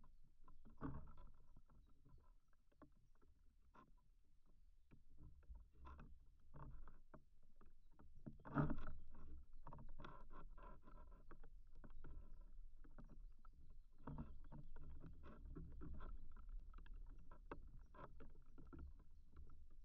Lithuania, Karveliskis, contact with reed
contact microphones on a single reed
5 July, 14:45